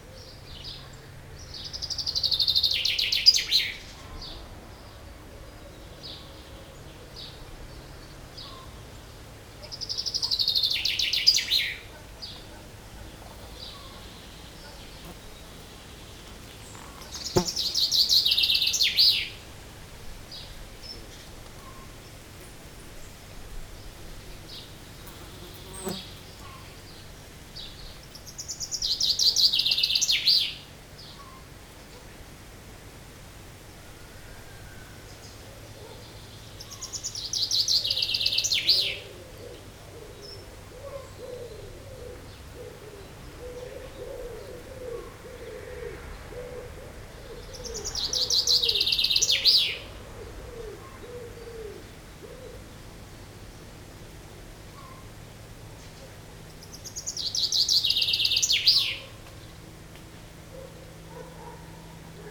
Saint-Martin-de-Nigelles, France - Chaffinch singing
A friendly chaffinch singing into a lime-tree. With this repetitive song, the bird is marking its territory.
18 July 2018